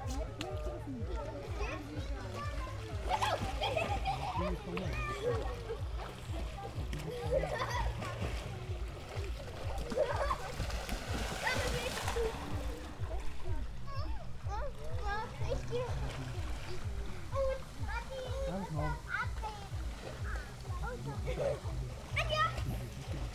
Bestensee, Pätzer Hintersee, lake ambience with kids at the bathing place
(Sony PCM D50, Primo EM172)